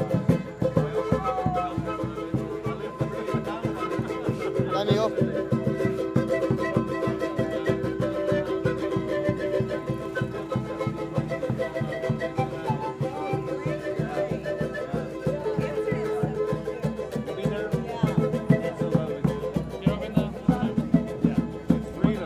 Malecón Maldonado, Iquitos, Peru - streetmusicians